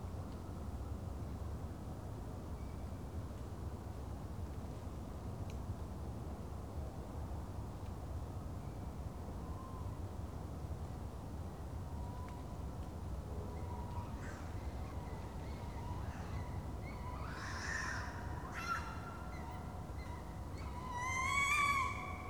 Hullerweg, Niedertiefenbach, Beselich - night ambience /w aircraft, Oktoberfest and owls
forest near village Niedertiefenbach, midnight ambience with sounds of an Oktoberfest party, the unavoidable aircraft crossing and one ore more Tawny owls calling, Strix aluco. But not completely sure here...
(Sony PCM D50, Primo EM172)